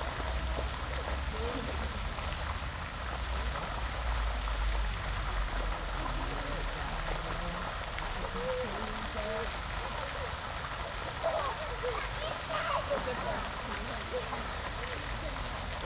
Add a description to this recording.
fountain in front of the university building